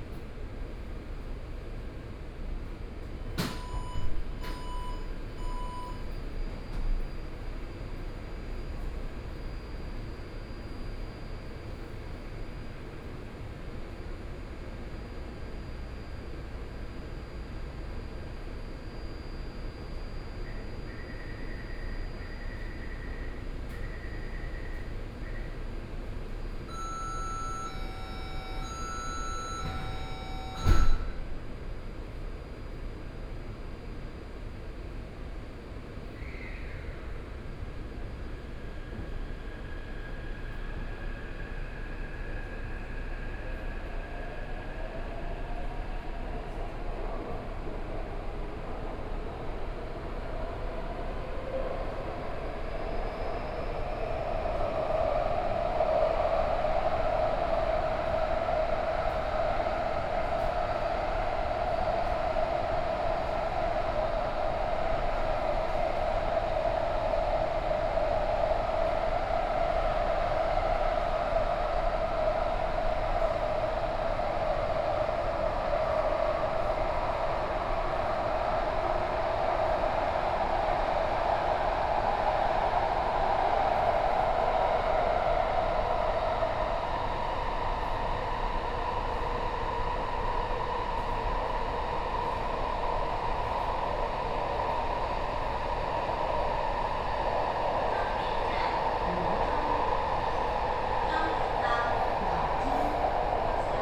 Sanchong District, New Taipei City - Luzhou Line
from Daqiaotou Station to Luzhou Station, Binaural recordings, Zoom H6+ Soundman OKM II
New Taipei City, Taiwan, 25 December, 13:21